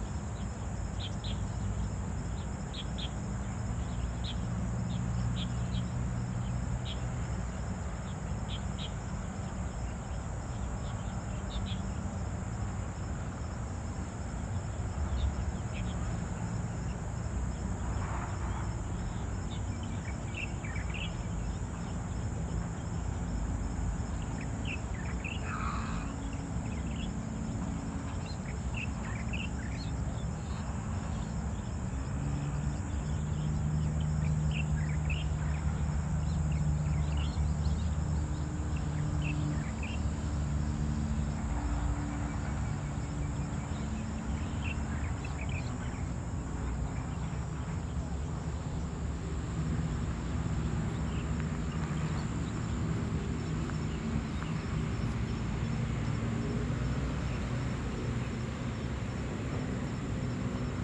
Riverside Park, Birds singing
Zoom H4n +Rode NT4